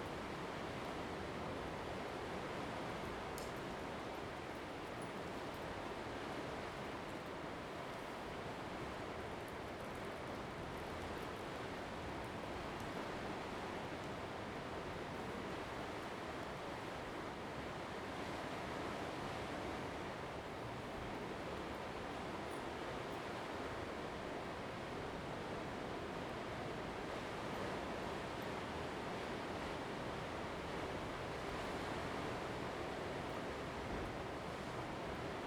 Lanyu Township, Taiwan - Next to the cave
Next to the cave, In the road, Traffic Sound, sound of the waves
Zoom H2n MS +XY